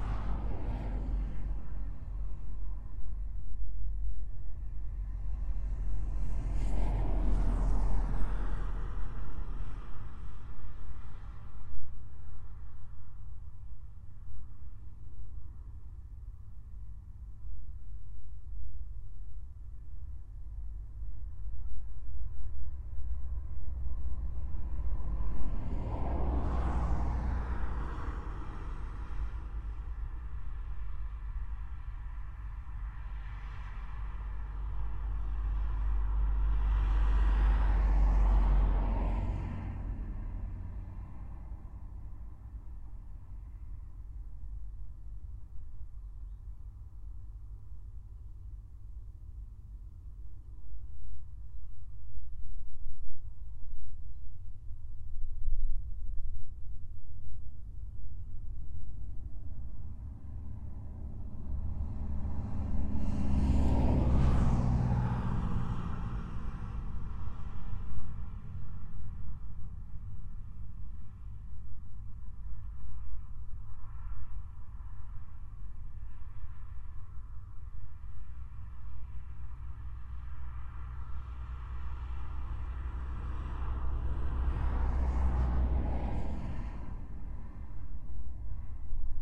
Sirutėnai, Lithuania, in a concrete tube
old concrete electricity pole lying in a meadow. small mics in it.